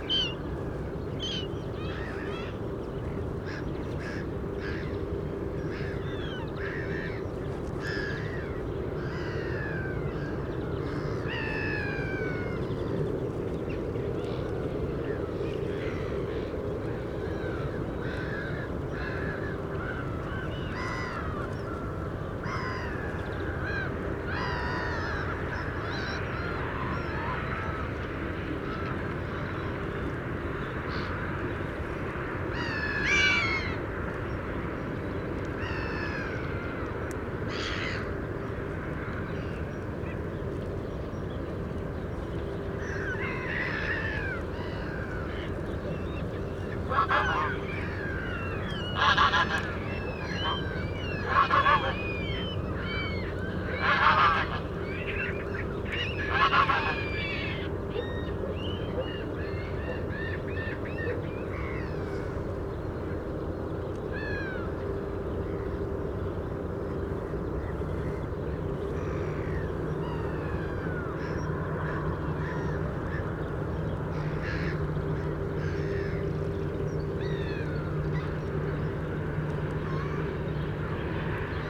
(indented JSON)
{
  "title": "Eijsden, Netherlands - Eijsden Parabola",
  "date": "2022-01-26 11:03:00",
  "description": "Birds on and around the water. Traffic from the Belgian side of the river, Church Bell.",
  "latitude": "50.78",
  "longitude": "5.70",
  "altitude": "47",
  "timezone": "Europe/Amsterdam"
}